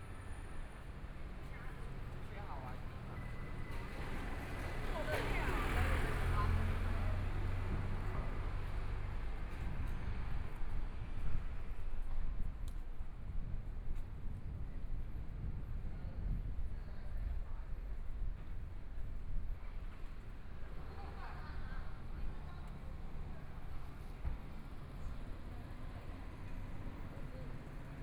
Zhongyuan St., Zhongshan Dist. - walking in the Street
walking in the Zhongyuan St., Traffic Sound, from Minquan E. Rd. to Nong'an St., Binaural recordings, Zoom H4n+ Soundman OKM II